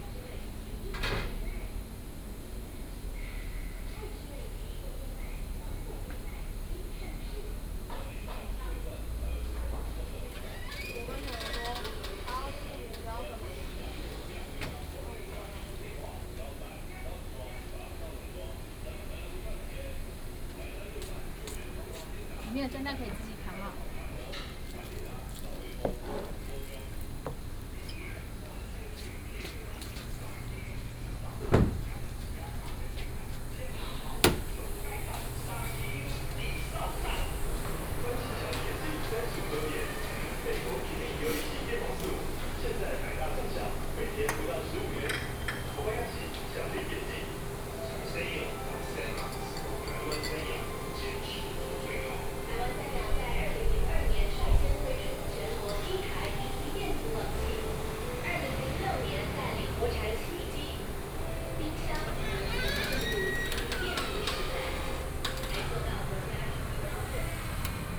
綠屋小鑽, 桃米里 - In the small restaurant
In the small restaurant, Traffic Sound
Nantou County, Puli Township, 桃米巷68號, 10 June 2015, 19:28